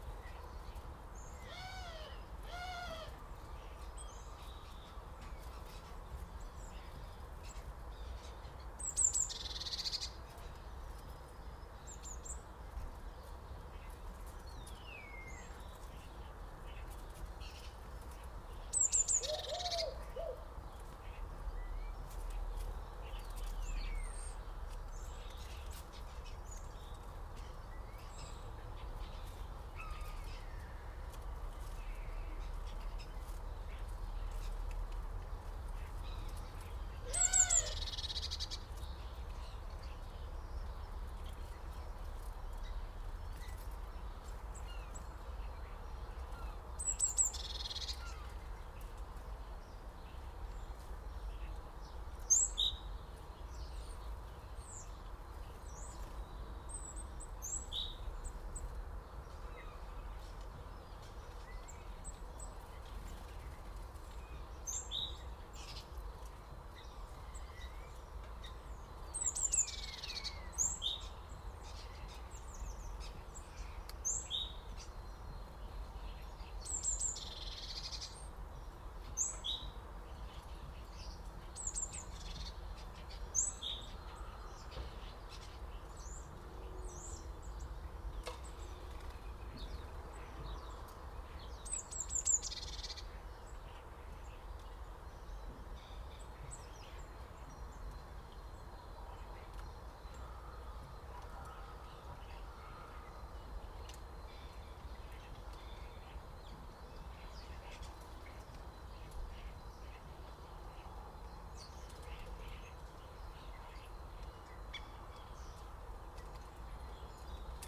Recorded using Mixpre6 and USI Pro, Blue-tits and Sparrows, maybe a robin or two that seem to gravitate towards a certain bush in the garden. I clipped the microphones onto the bush, and tried to capture not only their calls but also their wingbeats.
Colchester, UK, 25 December 2018